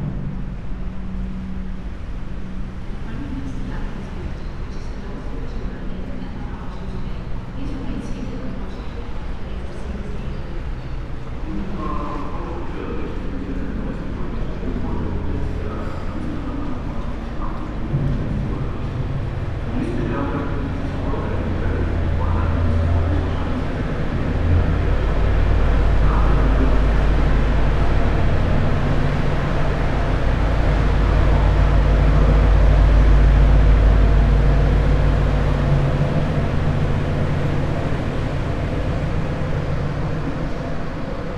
under Jannowitzbrücke, Berlin - standing still
Sonopoetic paths Berlin
Berlin, Germany, 3 September